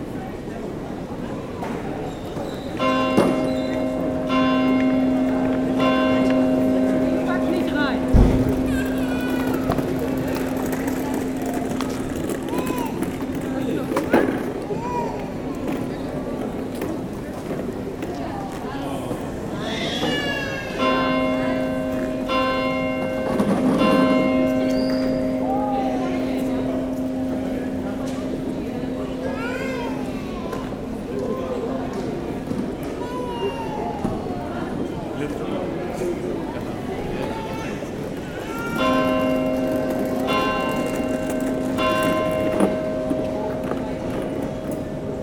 Maastricht, Pays-Bas - Onze-Lieve-Vrouw church
In front of the Onze-Lieve-Vrouw church, it's a cobblestones square. People are discussing quietly. I'm entering in the church and in the chapel, the door grinds, and after I go out. A touristic group is guided. At twelve, the bell is ringing angelus.
Maastricht, Netherlands, 20 October 2018, 12:00